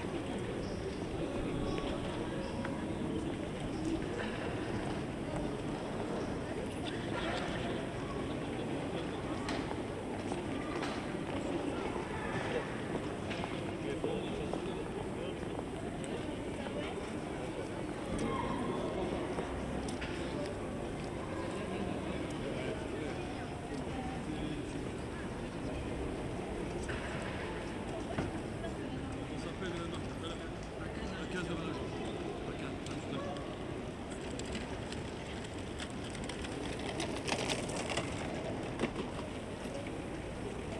Place des Héros, Arras, France - Atmosphere on Heroes Square, Arras
People and chimes in Arras, Heroes Square, Binaural, Zoom H3VR
France métropolitaine, France